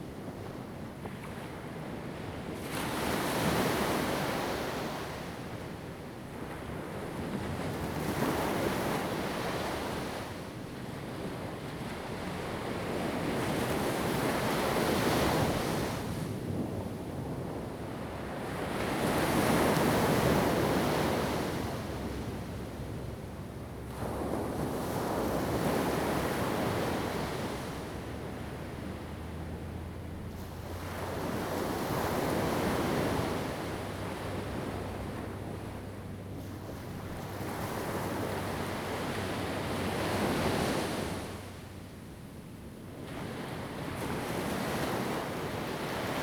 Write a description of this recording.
At the beach, Sound of the waves, Zoom H2n MS+XY + H6 XY